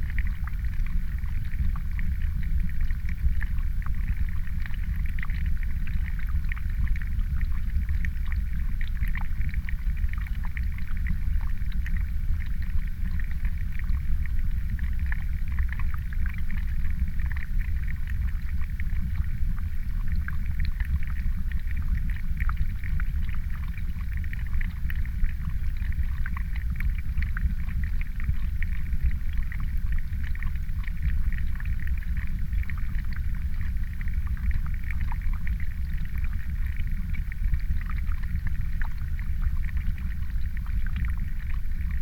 Naujakiemis, Lithuania, little dam
some kind of little "dam": water flows from pond into stream. hydrophone placed on metallic part of the "dam"